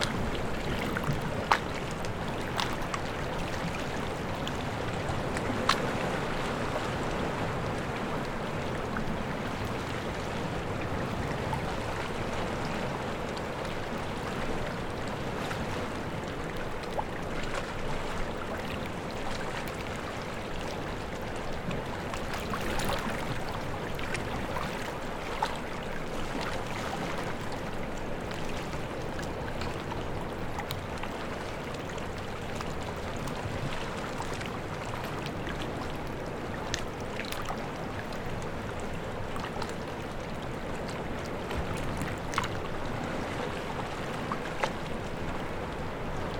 Lot. Capo Di Feno, Ajaccio, France - Capo Di Feno 01
Capo Di Feno Beach Sound
Captation ZOOM H6